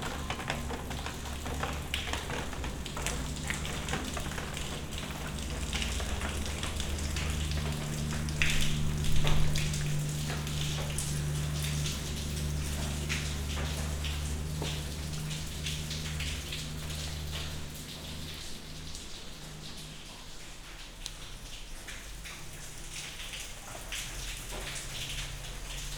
Punto Franco Nord, Trieste, Italy - walk in former workshop, rain
walk through derelict workshop building, rain drops falling from the broken ceiling. (SD702, AT BP4025)